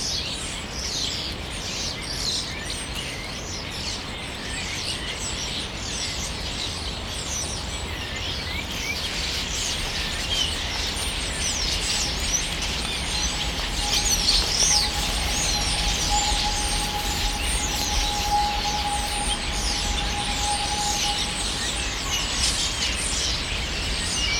Památník Boženy Němcové, Slovanský ostrov, Praha, Czechia - Spring gathering of starlings
Evening suddently arrived flogs of starlings to Prague. Recorded with Zoom H2N.
sonicity.cz